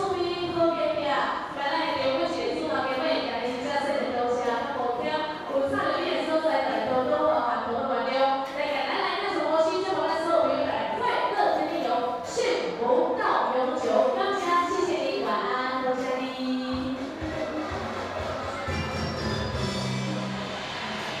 Daren St., Tamsui Dist., Taiwan - Karaoke
Folk Evening party, Dinner Show, Host, Karaoke
Zoom H2n Spatial audio
New Taipei City, Taiwan, 11 June 2016